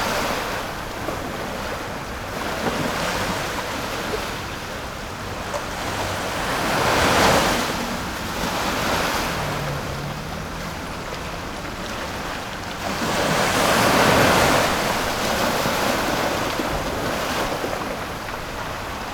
淡水海關碼頭, Tamsui Dist., New Taipei City - At the quayside
At the quayside, Tide
Binaural recordings
Sony PCM D50 + Soundman OKM II
Tamsui District, New Taipei City, Taiwan